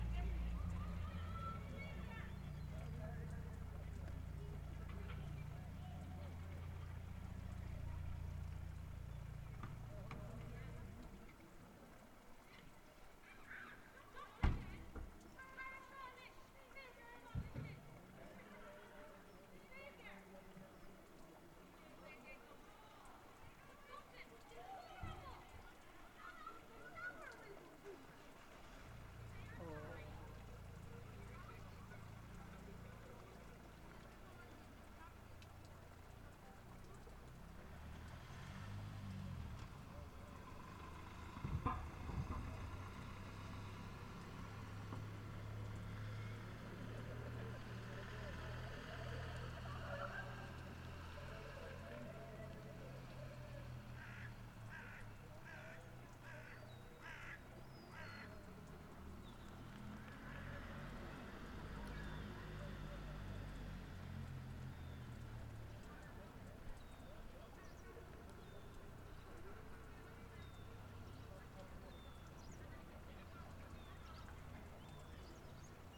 Oileán Chléire, North Harbour - North Harbour, Cape Clear Island
Sunset on a beautiful day on Cape Clear after the last boat has left for the evening.
Mothers shouting at their kids in Irish, seagulls and someone accidentally smashing a wine glass. A nice little snippet of life on Oileán Chléire.
Recorded with an Audio Technica AT2022 onto a Zoom H5.
County Cork, Munster, Ireland